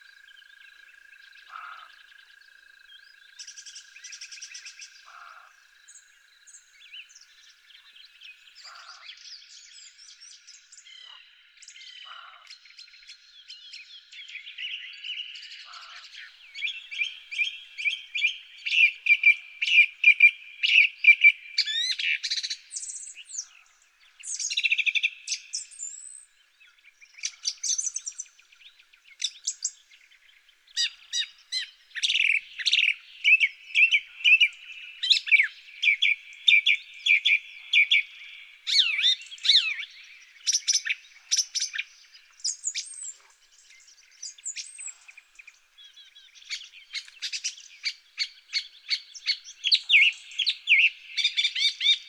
Unnamed Road, Colomieu, France - printemps dans le Bugey, coucher du jour
Dans le décors du film "l'enfant des marais"
Tascam DAP-1 Micro Télingua, Samplitude 5.1